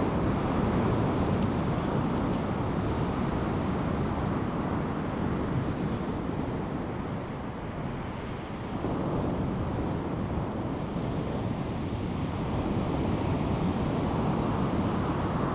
大溪, 太麻里鄉南迴公路 - on the beach
on the beach, Sound of the waves, traffic sound
Zoom H2N MS+ XY
Taitung County, Taiwan, 23 March 2018